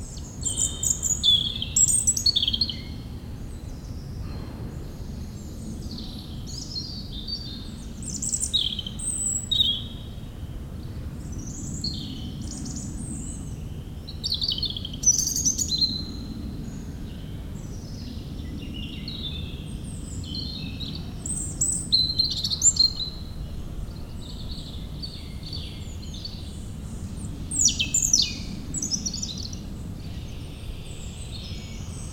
Oud-Heverlee, Belgium - Meerdaalbos
Into the huge forest called Meerdaalbos, the European Robin singing, and planes takeoffs.
29 March